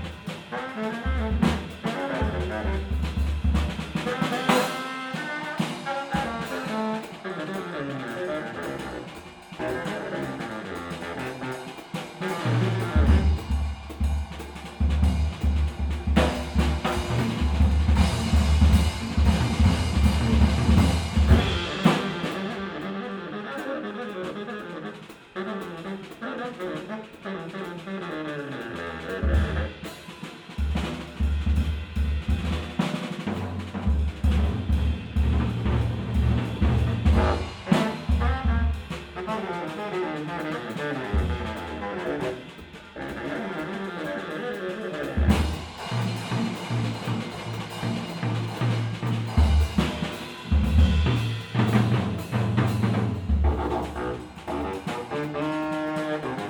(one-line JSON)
{"title": "Cologne, atelier concert - Köln, atelier concert", "description": "excerpt from a private concert, may 27, 2008.\ndirk raulf, bass saxophone, and frank koellges, drums, playing a version of Thelonious Monk's \"Well You Needn't\".", "latitude": "50.96", "longitude": "6.96", "altitude": "49", "timezone": "GMT+1"}